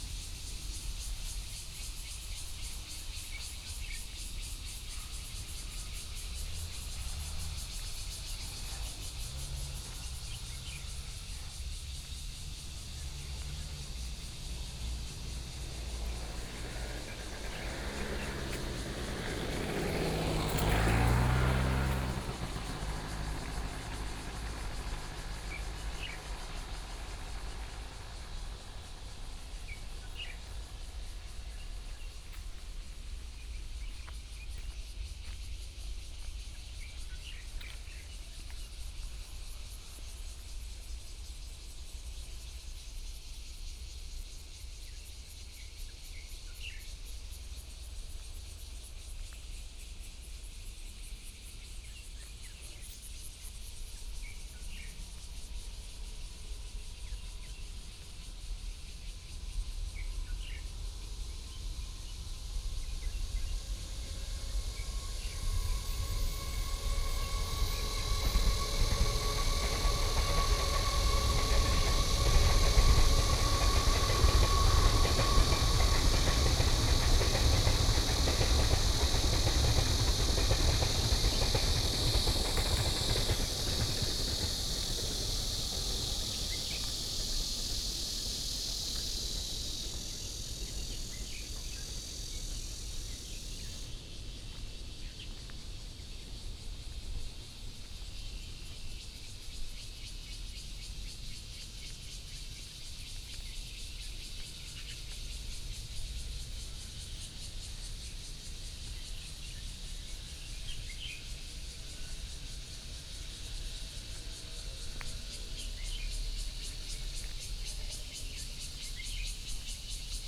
楊梅市富岡里, Taoyuan County - in the Abandoned factory
in the Abandoned factory, Birdsong sound, Cicadas sound, Traffic Sound, Far from the Trains traveling through
Yangmei City, Taoyuan County, Taiwan, 6 August 2014